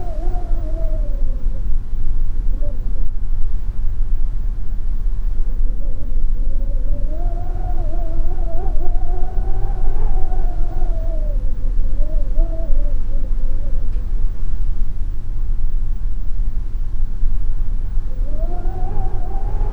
{
  "title": "Windy Weather, North Atlantic Ocean - Wind",
  "date": "2018-07-30 05:46:00",
  "description": "High winds outside causing air blowing under my cabin door during a transatlantic crossing.\nMixPre 3 with 2 x Beyer Lavaliers",
  "latitude": "48.61",
  "longitude": "-23.85",
  "timezone": "Atlantic/Azores"
}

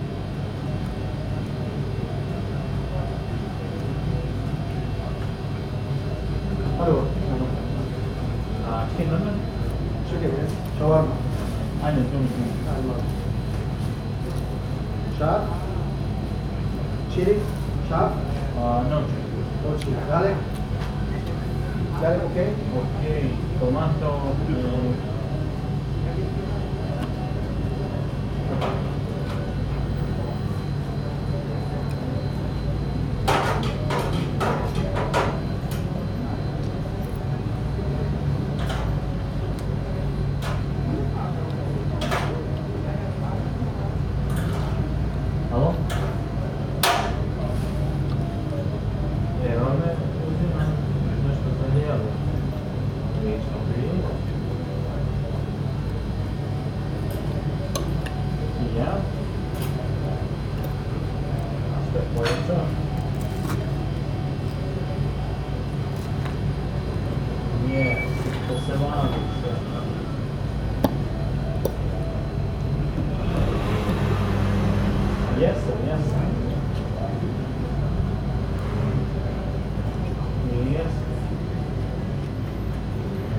{
  "title": "Falckensteinstraße, Berlin, Germany - fridges and customers",
  "date": "2017-09-18 19:33:00",
  "description": "inside the shawama place on the corner. outside people hanging out in front of the Kaisers supermarket.",
  "latitude": "52.50",
  "longitude": "13.44",
  "altitude": "36",
  "timezone": "Europe/Berlin"
}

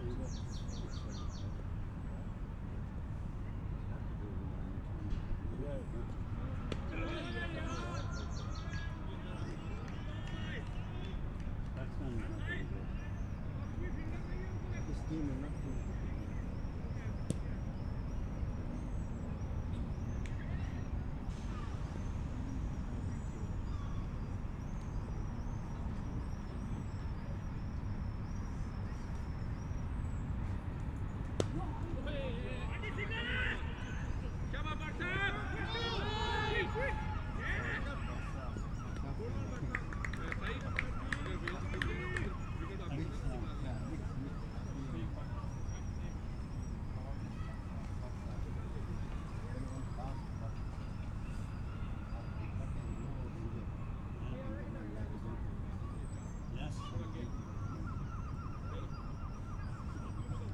{
  "title": "Houses, Dublin, Irlande - Cricket in Trinity college",
  "date": "2019-06-18 21:30:00",
  "description": "Cricket match in Trinity college between an irish and indian team.\nEvening time after a short rain, temp aroud 20°C 50m away\nRecording devices : Sound device Mix pre6 + 2 Primo EM172 AB30cm setup",
  "latitude": "53.34",
  "longitude": "-6.25",
  "altitude": "6",
  "timezone": "Europe/Dublin"
}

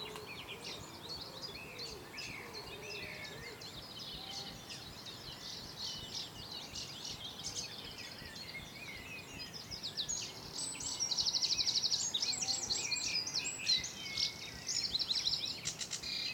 {
  "title": "Derrysallagh, Geevagh, Co. Sligo, Ireland - Sedge Warbler, Wren, Cuckoo, Cows and Others",
  "date": "2019-06-18 08:00:00",
  "description": "I can't remember what time it was exactly, early in the morning but after dawn. I walked down the drive and heard a bird singing that I'd never heard before. I snuck under the Horsechestnut trees and placed the microphone as near as I could to the bird (a Sedge Warbler). There's a nice mix of other singers and some reverberated mooos.",
  "latitude": "54.09",
  "longitude": "-8.22",
  "altitude": "83",
  "timezone": "Europe/Dublin"
}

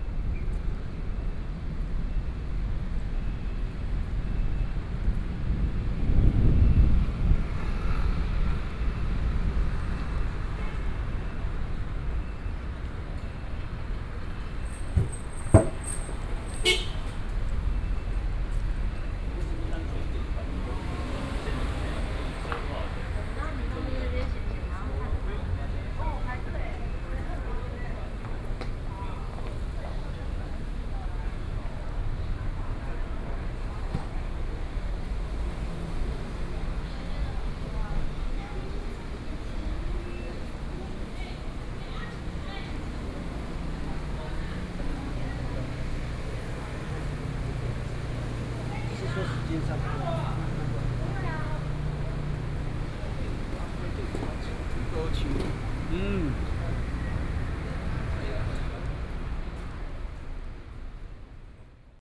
{
  "title": "中山區聚盛里, Taipei City - walking in the Street",
  "date": "2014-05-05 14:58:00",
  "description": "walking in the Street, Birdsong, Various shops sound, Traffic Sound",
  "latitude": "25.06",
  "longitude": "121.52",
  "altitude": "13",
  "timezone": "Asia/Taipei"
}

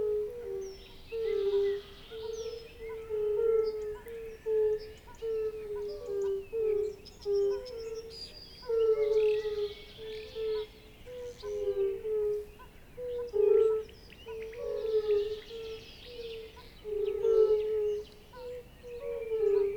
{
  "title": "CHKO Dunajské luhy - Bombina bombina",
  "date": "2020-04-15 19:27:00",
  "description": "Enchanting calls of Bombina bombina at dusk. Thanks to Námer family and Andrej Chudý.\nRecorded with Sony PCM-D100",
  "latitude": "48.05",
  "longitude": "17.18",
  "altitude": "139",
  "timezone": "Europe/Bratislava"
}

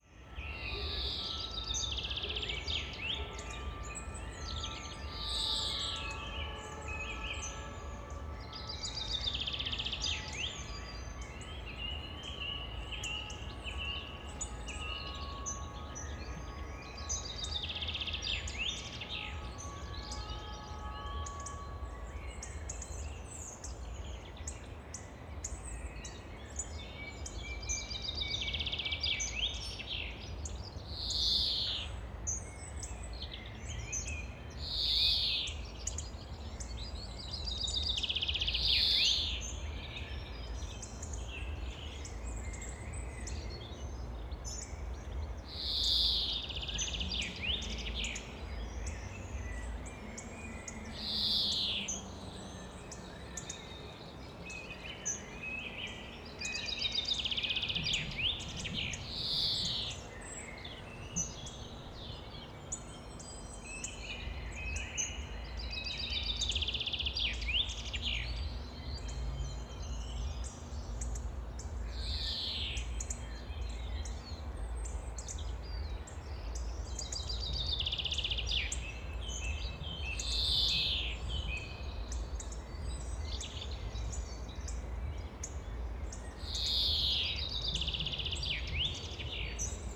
{
  "title": "Ostfriedhof, Ahrensfelde, Deutschland - graveyard ambience",
  "date": "2015-03-28 16:00:00",
  "description": "ambience at the graveyard Ostfriedhof, birds, city sounds\n(SD702, AT BP4025)",
  "latitude": "52.59",
  "longitude": "13.57",
  "altitude": "64",
  "timezone": "Europe/Berlin"
}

